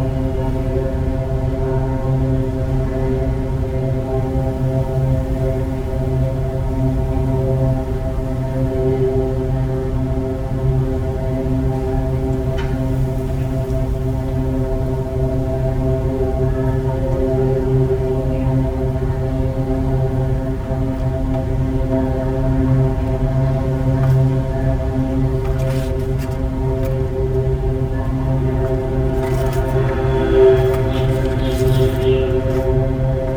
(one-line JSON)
{"title": "112台灣台北市北投區學園路1號國立臺北藝術大學圖書館 - the sound around the pond", "date": "2012-10-19 12:40:00", "description": "the pipe in water (recorded in a part which above the water)", "latitude": "25.13", "longitude": "121.47", "altitude": "83", "timezone": "Asia/Taipei"}